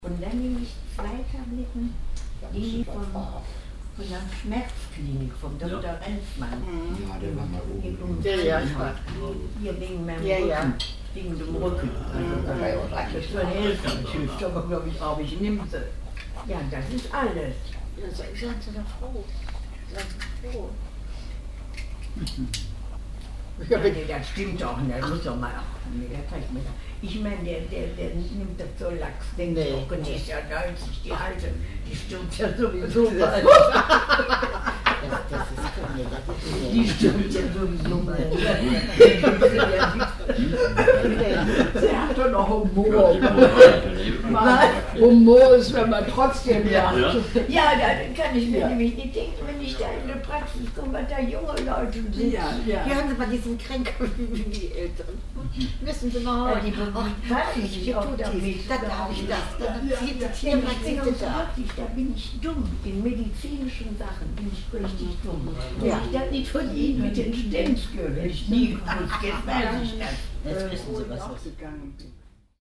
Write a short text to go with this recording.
conversations of older women in the afternoon, project: : resonanzen - neanderland - social ambiences/ listen to the people - in & outdoor nearfield recordings